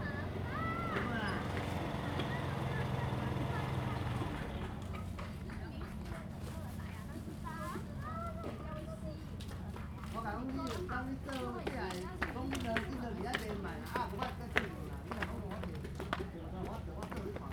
Birds singing, Tourists, Distance passenger whistle sound, Next to the temple
Zoom H2n MS+XY
Pingtung County, Taiwan, November 2014